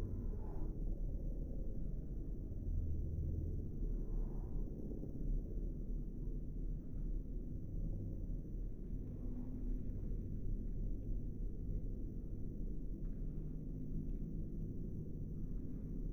Silverstone Circuit, Towcester, UK - 600cc mbikes slowed down ...
British Motorcycle Grand Prix ... 600cc second practice ... recorder has options to scrub the speed of the track ... these are the bikes at 1/8 x ...